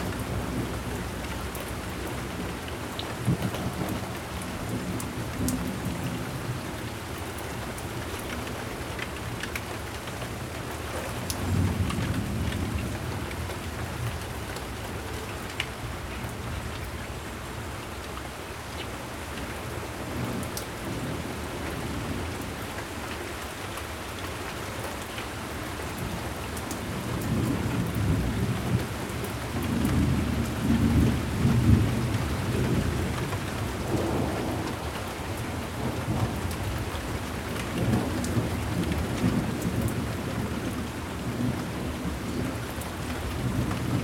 Another rainstorm during one of the wettest springs on record in the Midwest. Flooding imminent near major rivers.
Cook County, Illinois, United States of America, 30 May 2013